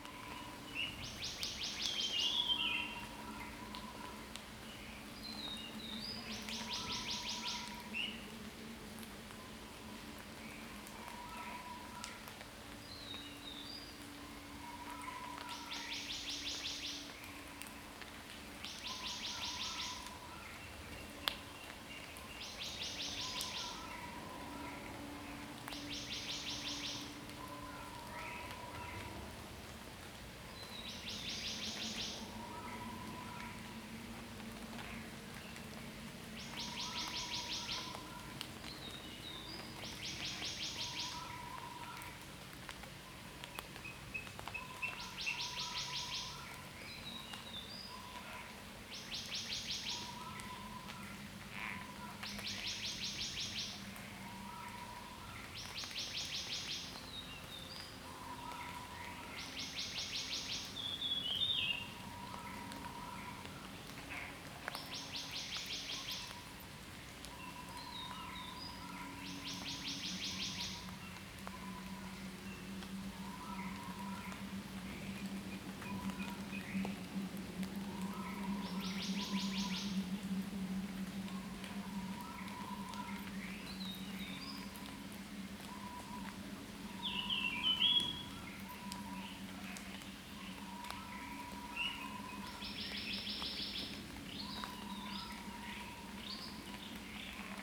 桃米里, Puli Township, Nantou County - bamboo forest
Birds called, bamboo forest
Zoom H2n MS+XY